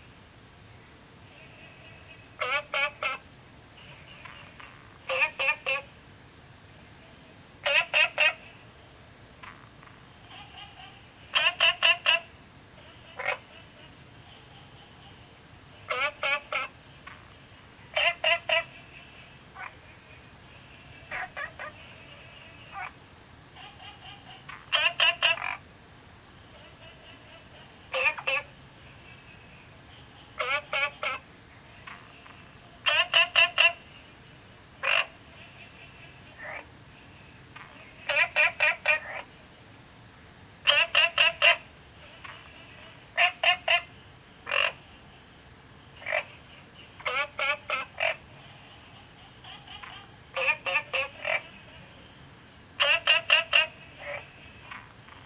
青蛙ㄚ 婆的家, 埔里鎮桃米里 - Small ecological pool
Frogs chirping, Insects sounds, Small ecological pool
Zoom H2n MS+ XY